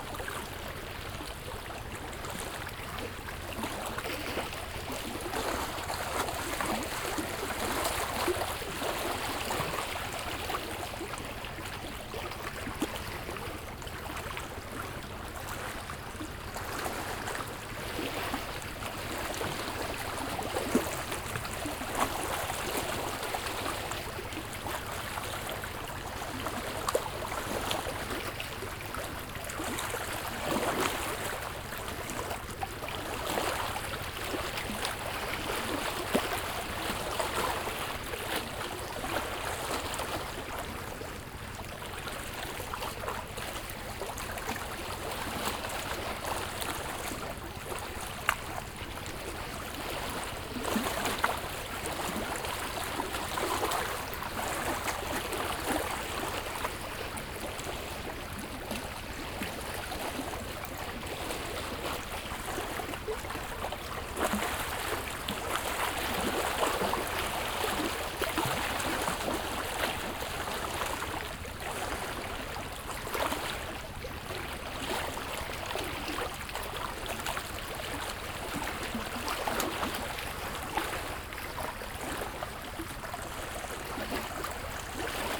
Lake shore, Kariba Lake, Sinazongwe, Zambia - rigs moving out on the lake for the night...
Kapenta fishing is big business at Kariba lake; for three weeks every months, the lake is filled with kapenta rigs fishing; in fact, from far away, a newcomer may think there's a big city out there in the dark; only one week over the full moon, there's quite; fishing is not permitted; each rig has at least two generators running, one to lift the net the other for movement of the rig...
Southern Province, Zambia, 7 July 2018